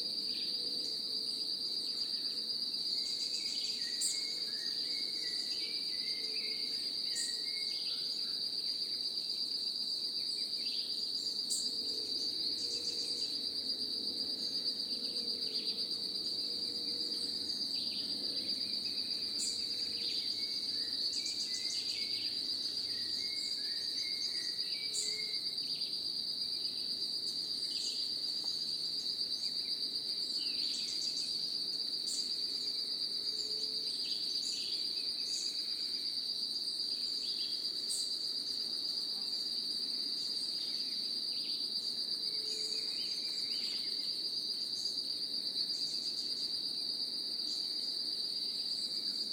Parque da Cantareira - Núcleo do Engordador - Trilha da Mountain Bike - ii
register of activity in the park